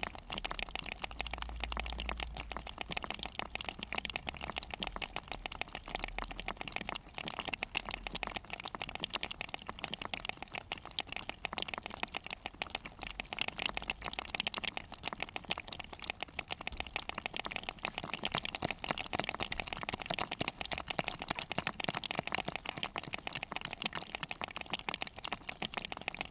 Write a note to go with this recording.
Endemic spider crabs after local experienced fisherman caught them by hand and self made archaic tools. ∞Thank you Û∞